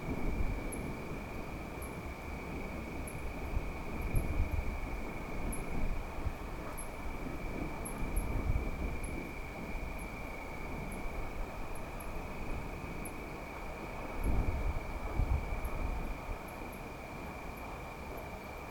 stromboli, ginostra - night ambience
autumn night ambience on stromboli island
Lipari ME, Italy, 20 October 2009